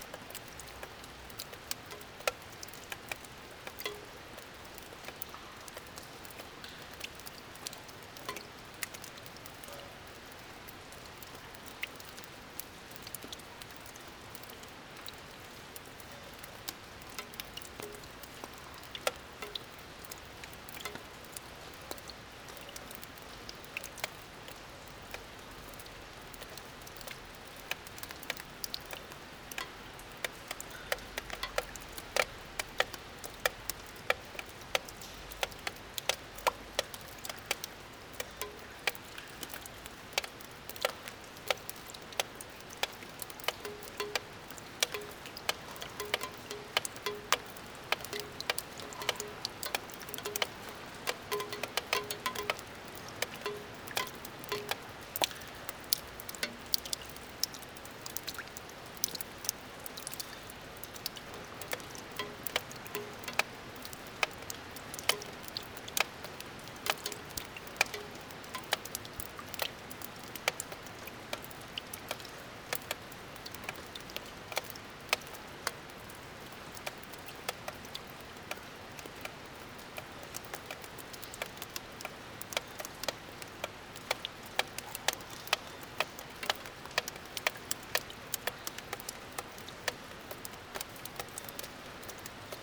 Herserange, France - Rain symphony - VI - Andante
This is a one hour sound of the rain onto the gigantic roofs of an abandoned factory. This warehouse is the Herserange wire drawing plant, located in Lorraine, France. It has been in a state of abandonment for 20 years. In 1965, Longwy area was the lifeblood of 26,000 steelmakers. Today, absolutely everything is dead. Areas are devastated, gloomy and morbid.
Fortunately, I had the opportunity to make a poetic visit, since I had the rare and precious opportunity to record the rain in all its forms. The gigantic hangar offers a very large subject, with many roof waterproofing defects.
I made two albums of this place : a one-hour continuity of rain sound (the concerto) and a one-hour compilation of various rain sounds (the symphony). Here is the sound of the symphony.
VI - Andante